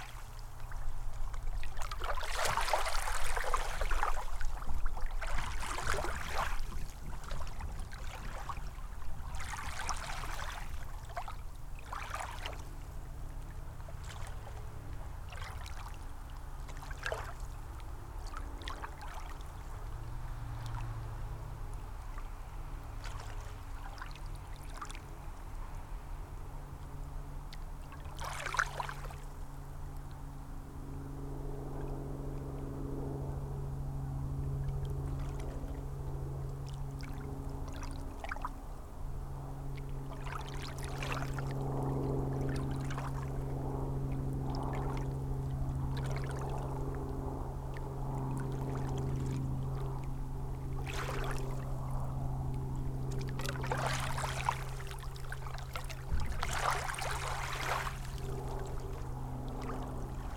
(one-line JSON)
{"title": "East Bay Park, Traverse City, MI, USA - Freezing Ripples in February", "date": "2016-02-04 14:55:00", "description": "Thursday afternoon on a winter's day. Minor water movement heard, near shore, with most of bay otherwise frozen. Airplane headed to/from nearby airport heard. Stereo mic (Audio-Technica, AT-822), recorded via Sony MD (MZ-NF810, pre-amp) and Tascam DR-60DmkII.", "latitude": "44.76", "longitude": "-85.58", "altitude": "175", "timezone": "America/Detroit"}